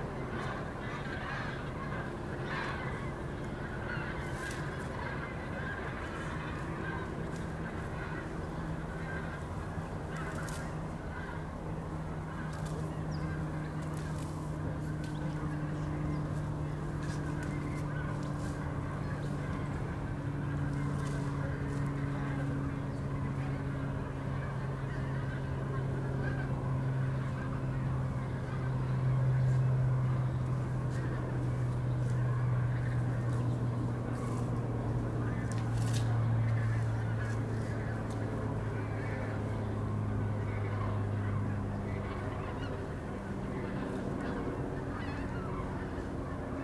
Linum, Fehrbellin, Germany - cranes crossing
cranes (german: Kraniche) on their way to the rest places near the Linum ponds. In the beginning of the recording, a flock of geese is leaving the place first.
(Sony PCM D50, DPA4060)
October 2018